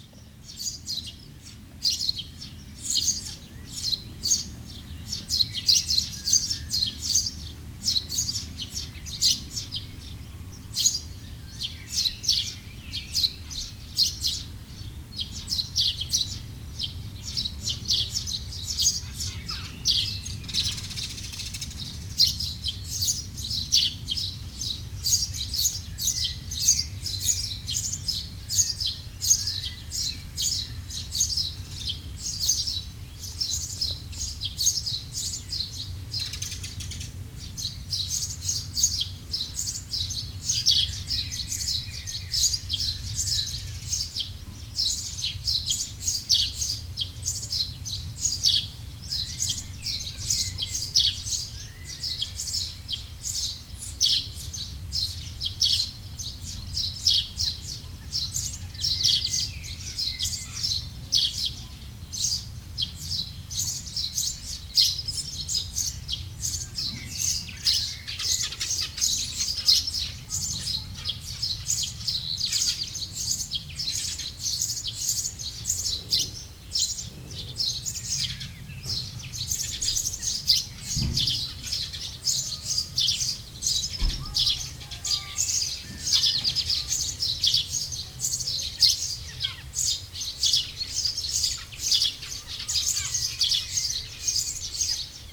A small village on the morning. Scoundrels sparrows singing and quietly, people waking up in the neighborhood.